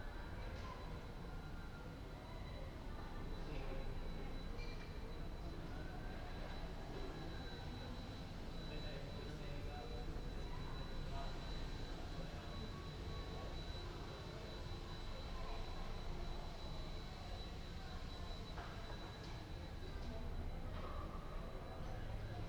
"Friday afternoon May 1st with laughing students in the time of COVID19" Soundscape
Chapter LXIII of Ascolto il tuo cuore, città. I listen to your heart, city
Friday May 1stth 2020. Fixed position on an internal terrace at San Salvario district Turin, fifty two days after emergency disposition due to the epidemic of COVID19.
Start at 3:43 p.m. end at 4:09 p.m. duration of recording 25’46”